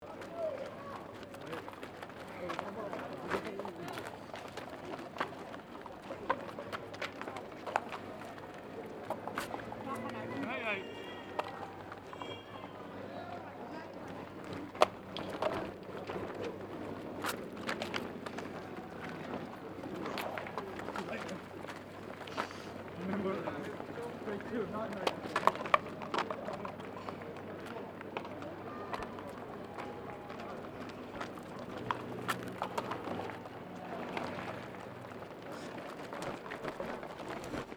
Rotterdam Marathon 2012, right after provision post. Directional mic pointing at the feet of the runners.
Charlois, The Netherlands, 15 April